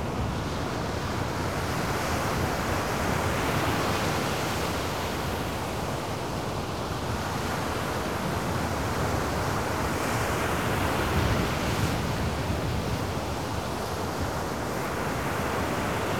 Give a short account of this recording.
high waves blasting on the shore, pleasant wind gusts accompanying